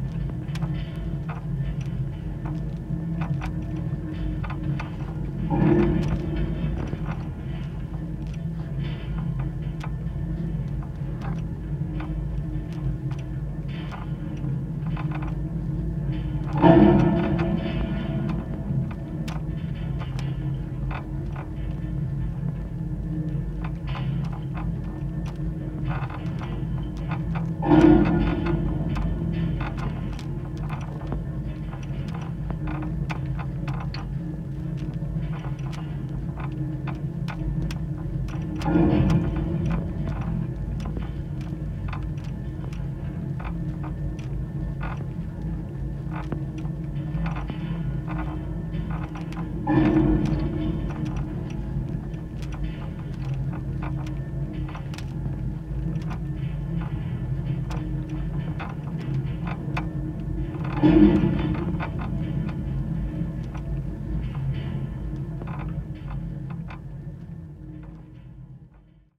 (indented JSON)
{
  "title": "waterski machine cable, Vienna",
  "date": "2011-08-12 12:44:00",
  "description": "contact mics on the stay cable of the waterski machine",
  "latitude": "48.21",
  "longitude": "16.43",
  "altitude": "158",
  "timezone": "Europe/Vienna"
}